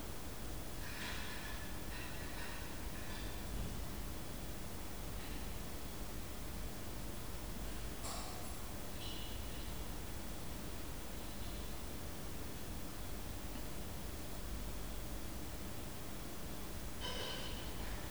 St Mary's Parade, Lancaster, UK - Lancaster Priory
Meditation at Lancaster Priory. Recorded on a Tascam DR-40 with the on-board coincident pair of microphones. The gain is cranked right up, the Priory being very quiet with just movements of a member of the clergy preparing for the next service, the 9 o'clock bells and a visiting family towards the end of the recording.